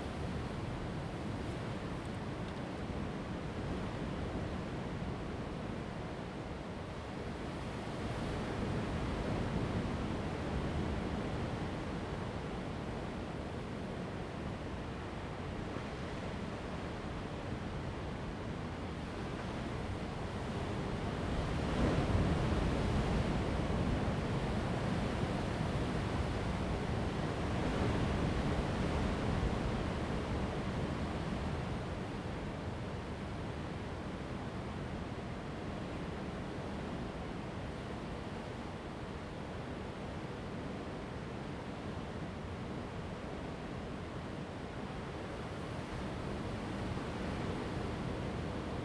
Lüdao Township, Taitung County - sound of the waves
On the coast next to the announcement, Tide and Wave, Traffic Sound
Zoom H2n MS+XY
Taitung County, Taiwan, 30 October 2014, 15:40